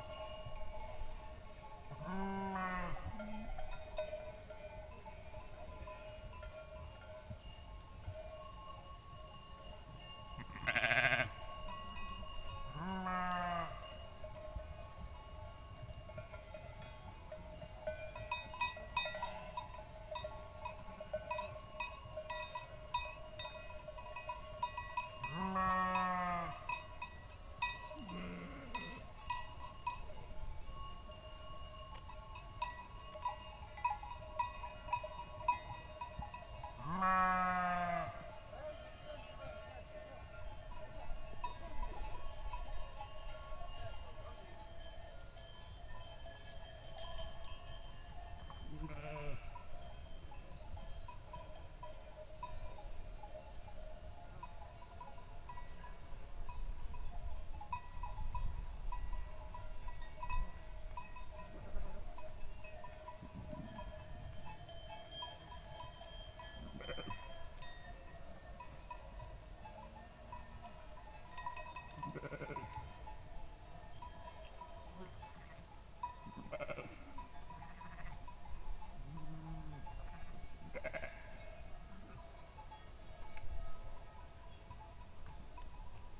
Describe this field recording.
Recording made on the shores of Lake Lautier (2350m) with a Roland R05 recorder